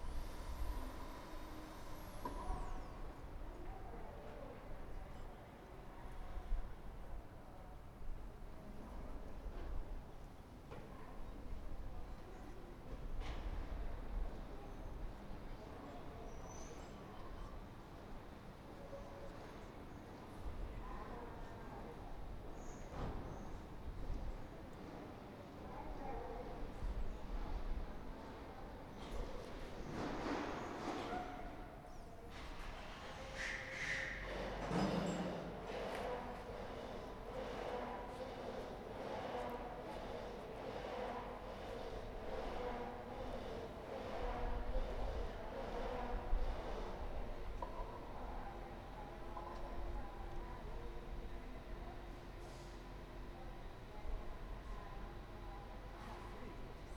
Ascolto il tuo cuore, città. I listen to your heart, city. Several chapters **SCROLL DOWN FOR ALL RECORDINGS** - Afternoon with bell and strange buzz in the time of COVID19 Soundscape
"Afternoon with bell and strange buzz in the time of COVID19" Soundscape
Chapter LIII of Ascolto il tuo cuore, città. I listen to your heart, city.
Wednesday April 22nd 2020. Fixed position on an internal terrace at San Salvario district Turin, forty three days after emergency disposition due to the epidemic of COVID19.
Start at 4:13 p.m. end at 5:09 p.m. duration of recording 55’44”.
Piemonte, Italia, 22 April 2020, 16:13